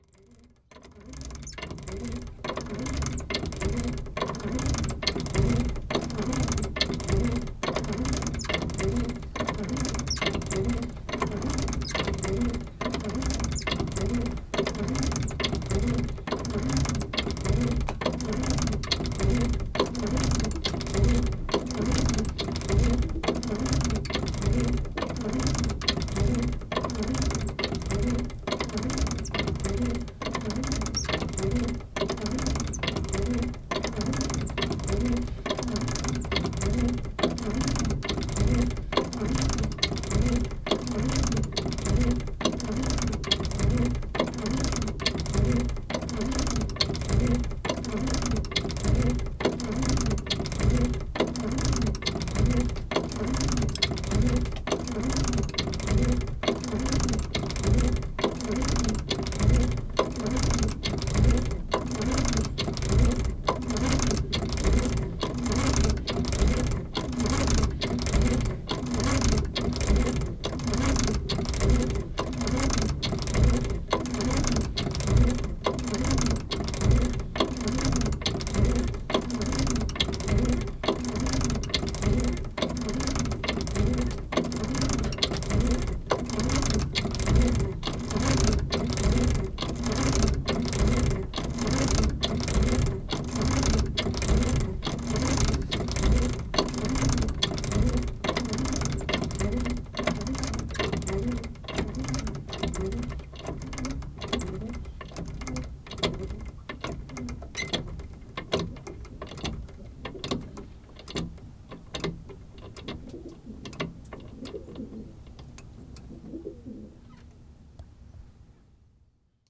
Bivilliers (Orne)
Église St-Pierre
La volée manuelle - mécanisme seul